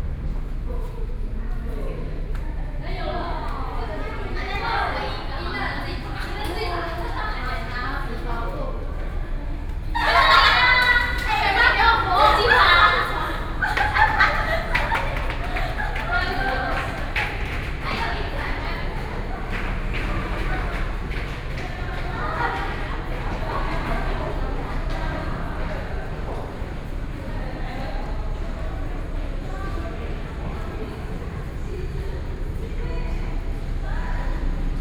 Hsinchu Station - Underpass
walking in the Underpass, Sony PCM D50 + Soundman OKM II